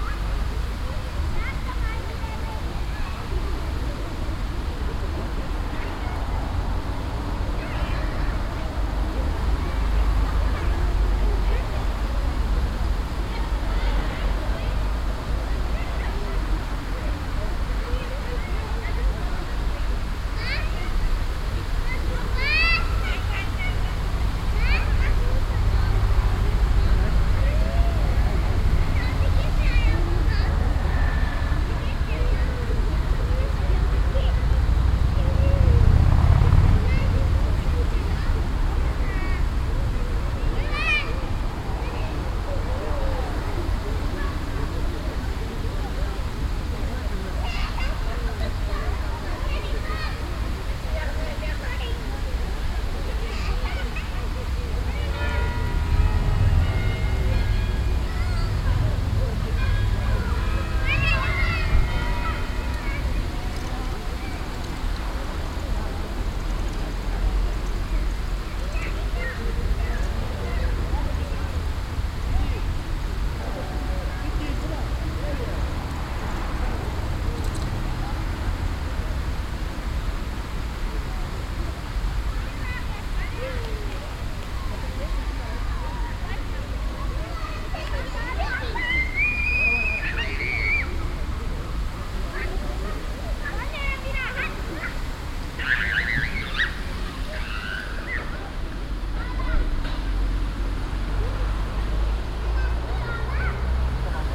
People with children enjoying a sunny Sunday in a park in the city. Noise of a water game, some traffic, 2:30 PM chimes of the town hall clock. Sony PCM-A10 recorder with Soundman OKM II Klassik microphone and furry windjammer.